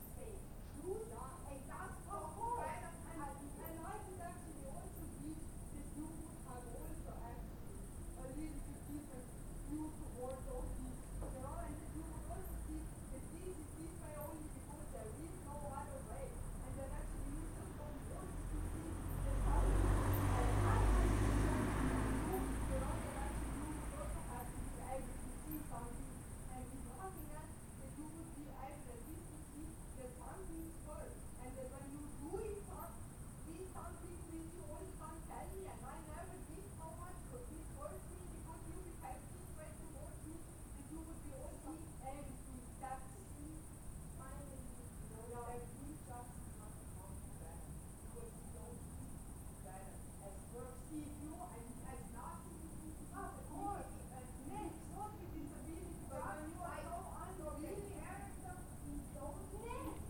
one minute for this corner: Kersnikova ulica